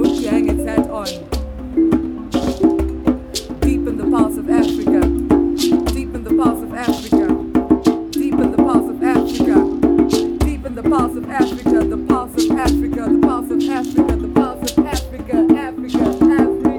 Mufakose, Harare, Zimbabwe - Blackheat jamming in Mufakosi...
We are sitting a long morning under a tree in a garden in Mufakosi township of Harare. You can hear the traffic of one of the main Through-roads just behind the garden-hedge. Blackheat DeShanti is jamming away with her band… children and neighbors drop buy and linger… “Deep in the Pulse of Africa…”